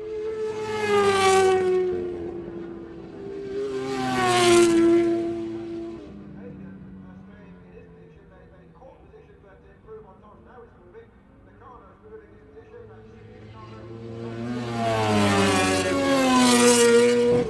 Castle Donington, UK - British Motorcycle Grand Prix 2003 ... moto grandprix ...
British Motorcycle Grand Prix 2003 ... Qualifying part one ... 990s and two strokes ... one point stereo mic to minidisk ...